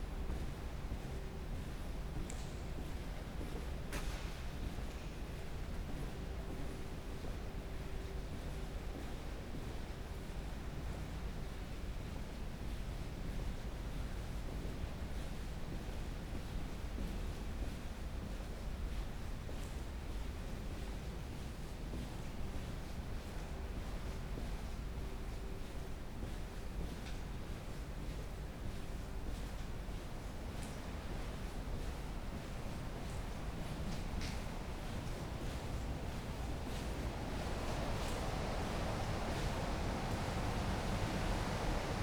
Whitby, UK - West Cliff Lift
Going down in the lift ... lavaliers clipped to baseball cap ...
3 September